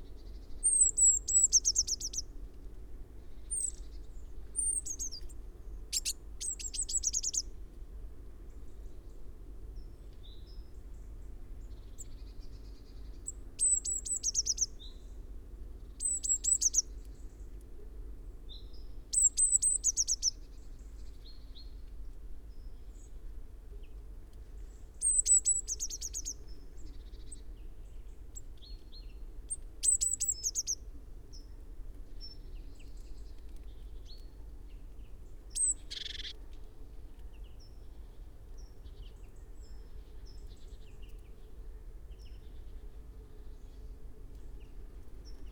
Luttons, UK - blue tit foraging ...
Blue tit foraging ...variety of calls in a variety of pitches ... bird calls from great tit ... blackbird ... wood pigeon ... lavalier mics in parabolic ... background noise ... including a bird scarer ...
Malton, UK, 27 January 2017, ~09:00